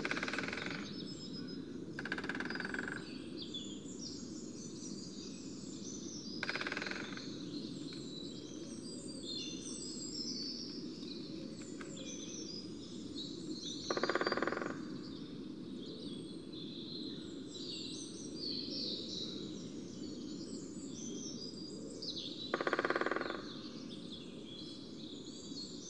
Pamber Forest Nature Reserve, Silchester UK - Greater Spotted Woodpeckers drumming
There is talk of Lesser Spotted Woodpeckers in this location, ( I didn't see one). I spent the second of two mornings in this wonderful wood listening to Greater Spotted Woodpeckers drumming, it is their time I think. Sony M10 inside a parabolic reflector, an unedited recording including me making adjustments and rustling about.